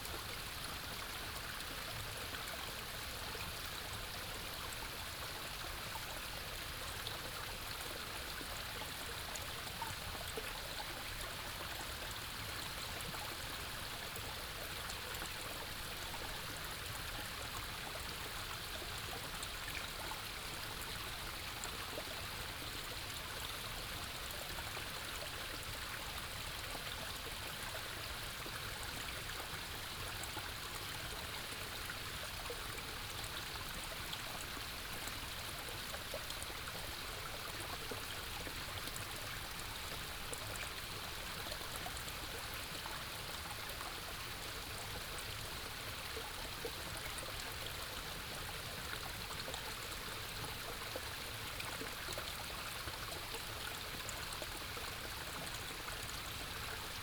Zhonggua Rd., Puli Township, 南投縣 - Small brook
Small brook
Binaural recordings
Sony PCM D100+ Soundman OKM II